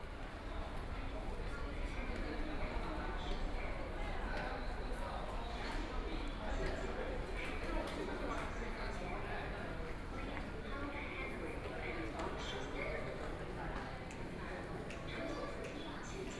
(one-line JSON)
{"title": "Laoximen Station, Shanghai - walk in the Station", "date": "2013-12-01 13:00:00", "description": "Walking into the station from the ground, Then towards the platform waiting for the train, Binaural recordings, Zoom H6+ Soundman OKM II", "latitude": "31.22", "longitude": "121.48", "altitude": "13", "timezone": "Asia/Shanghai"}